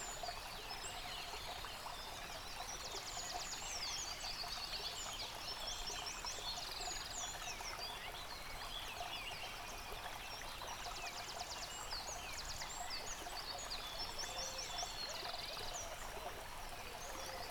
Dlouhý Důl, Krásná Lípa, Czechia - Ptáci a potok
Ptačí sněm snímaný u potoka.. nahráno na ZOOM H6